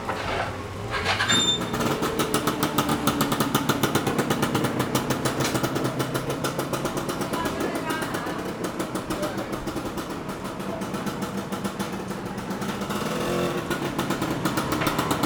Lixing St., Yonghe Dist., New Taipei City - Walking in a small alley
a small alley, Traffic Sound, Traditional Market, Zoom H4n + Rode NT4